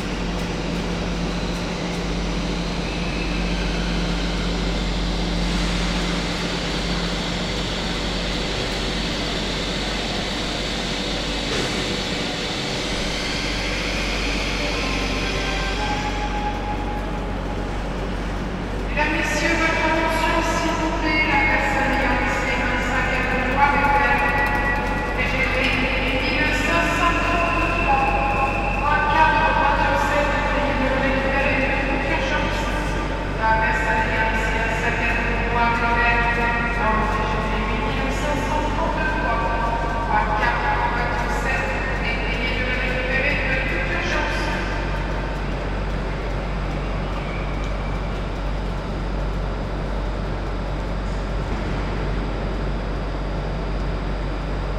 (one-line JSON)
{"title": "Pont en U, Bordeaux, France - BDX Gare 01", "date": "2022-08-10 15:45:00", "description": "train station\nCaptation : ZOOMH6", "latitude": "44.83", "longitude": "-0.56", "altitude": "15", "timezone": "Europe/Paris"}